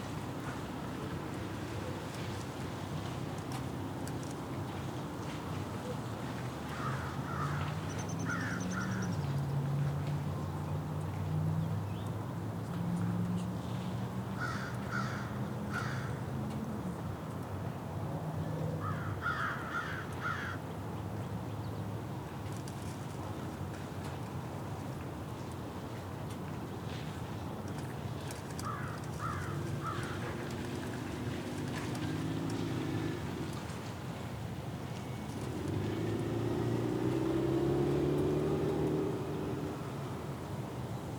Matoska Park - Matoska Park Part 1
The sound of a warm March day at Matoska Park in White Bear Lake, MN